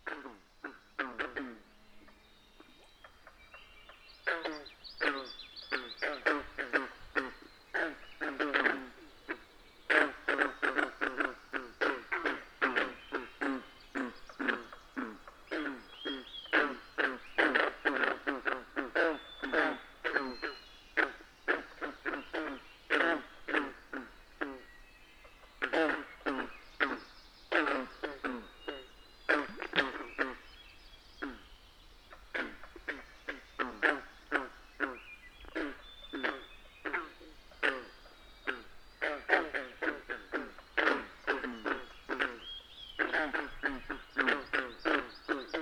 Green Frogs, Ellen Brown Lake Road
Green Frogs and various bird species call and sing before a summer sunrise. Ellen Brown Lake Road, Pictou County Nova Scotia.
World Listening Day